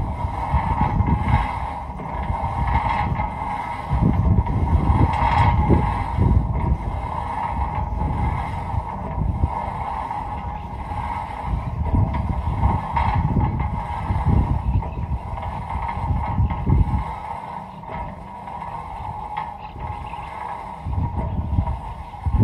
La Blanqueada Coronel Segui, Provincia de Buenos Aires, Argentina - Windmill, wind and birds
On a farm, on a very remote area of the Argentine Pampas. The windmill was turning though not working properly, that is why there is no sound of water coming out.
I thought it might be of some interest
6 July 2021